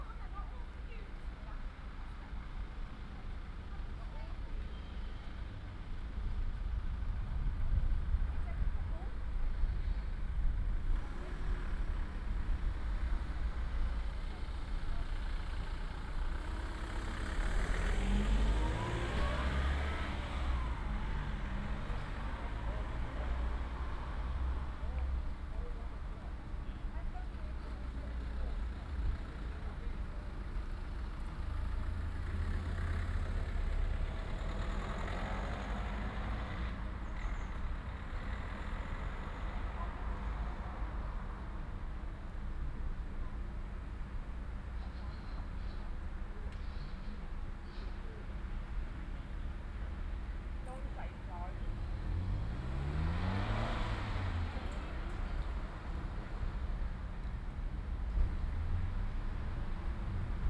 Standing by river bridge opposite car park
Newton Abbot, Devon, UK